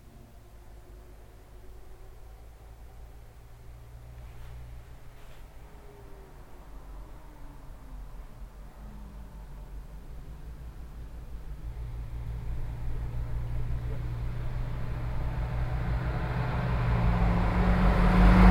enscherange, bus line 655
A bus from the line 655 driving to Hosingen passing by on the road to Enscherange.
Enscherange, Buslinie 655
Ein Bus der Linie 655 nach Hosingen fährt auf der Straße nach Enscherange vorbei.
Enscherange, ligne de bus no 655
Un bus de la ligne 655 roulant sur la route d’Enscherange en direction de Hosingen.